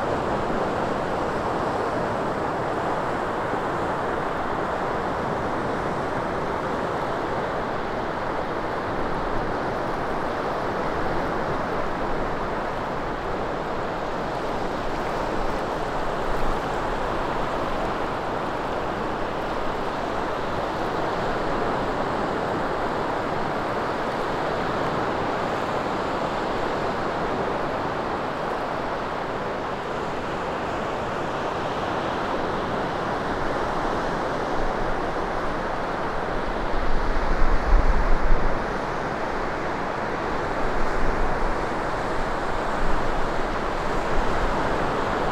Boulevard Wattier, Merville-Franceville-Plage, France - Pandemic waves
Little waves on the beach, Merville-Franceville-Plage during Covid 19 pandemic, Zoom H6